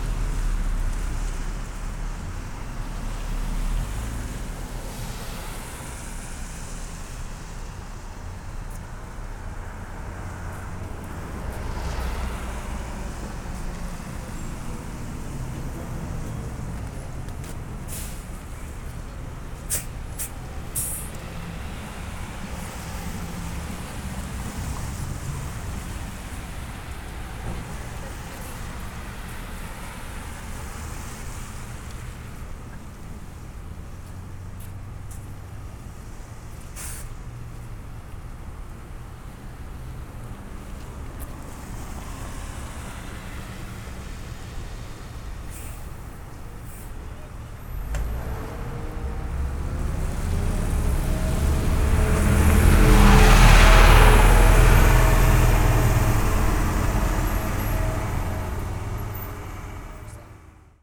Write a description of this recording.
Standing in front of Patati Patata